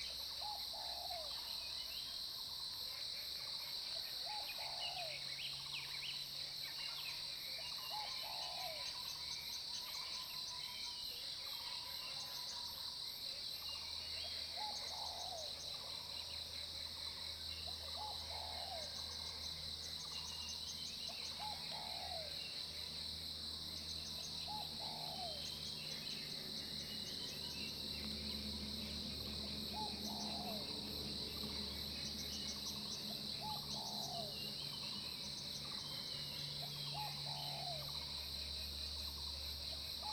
種瓜路4-2號, 桃米里 Puli Township - Early morning
Frogs chirping, Early morning, Bird calls, Cicadas sound, Insect sounds
Zoom H2n MS+XY
Nantou County, Taiwan, 10 June 2015